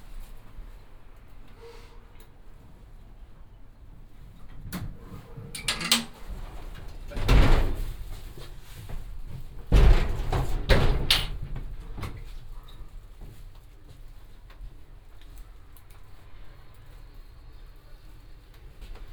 elevator Reina Victoria, Valparaíso, Chile - elevator ride, station ambience
Reina Victoria, one of the many elevator in Valparaiso, elevator ride downwards, station ambience
(Sony PCM D50, OKM2)
24 November 2015, 18:45